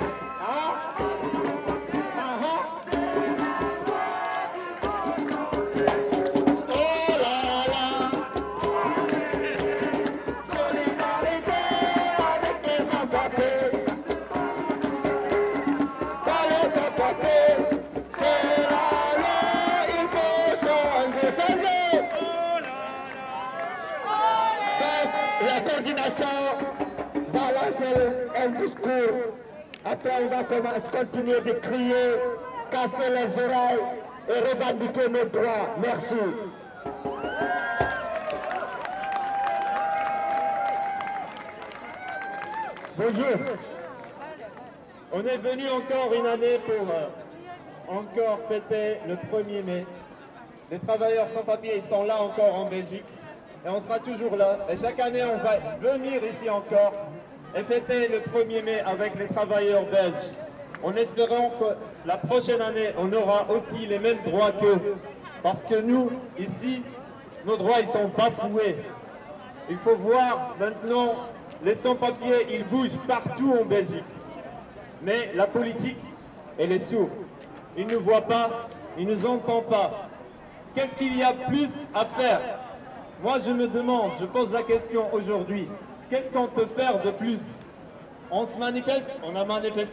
Boulevard du Midi, Bruxelles, Belgique - Sans Papiers 1er Mai 2021
A lot of collectives for rights for sans papiers protest against the violence of Belgian migration policies, joining the Transnational Migrants’ Struggle "to make this May 1st a day for the freedom, the power and dignity of migrants. A day of strike against the institutional racism that supports exploitation and reproduces patriarchal violence."